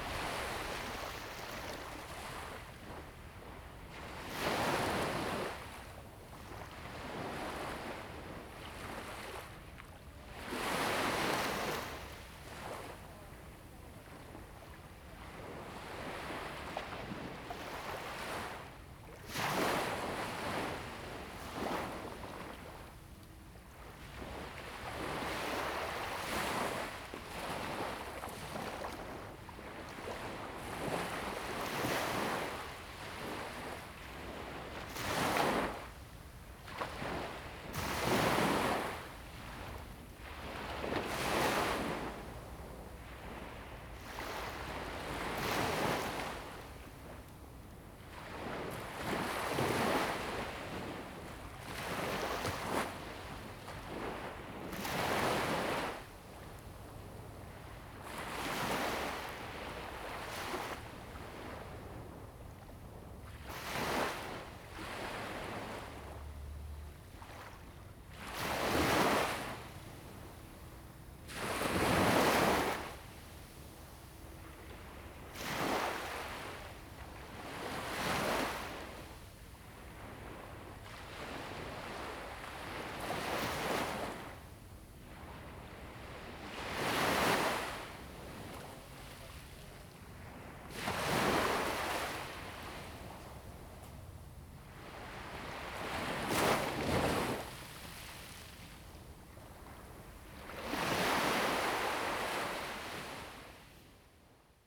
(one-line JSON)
{"title": "湖下海堤, Jinning Township - Sound of the waves", "date": "2014-11-02 17:27:00", "description": "Sound of the waves\nZoom H2n MS+XY", "latitude": "24.44", "longitude": "118.31", "altitude": "6", "timezone": "Asia/Taipei"}